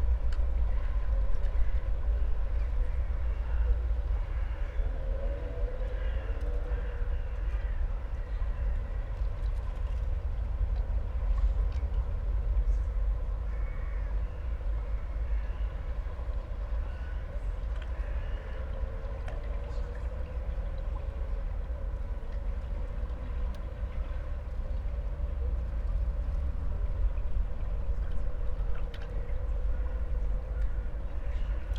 frankfurt/oder, holzmarkt: river bank - the city, the country & me: ambience at the oder river
ambience at the oder river
the city, the country & me: september 27, 2014